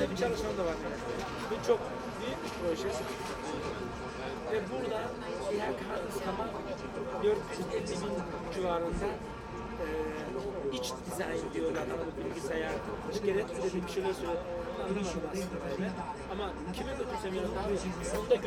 koeln, luebecker str, italian bar - outside, public viewing
waiting for dinner (spaghetti carbonara). world championship on every tv in town.